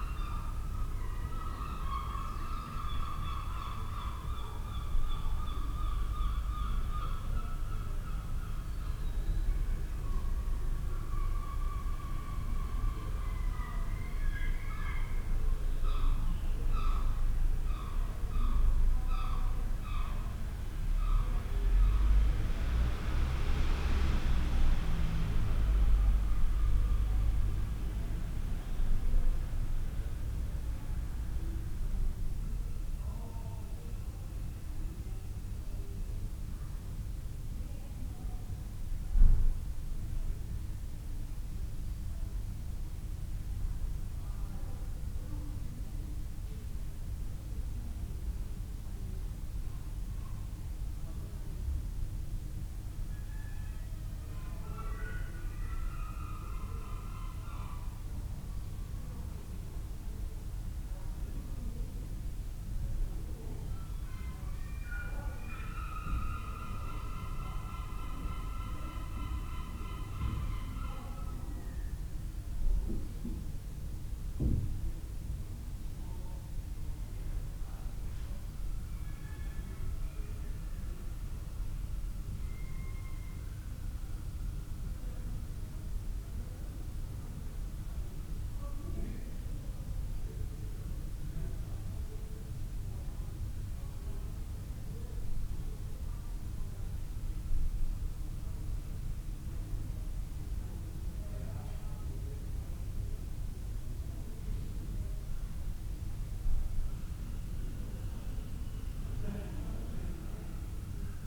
inside ... St Ninians Church ... outside ... Whitby ... lavalier mics clipped to sandwich box ... bird calls ... herring gull ... dunnock ... background of voices and traffic ...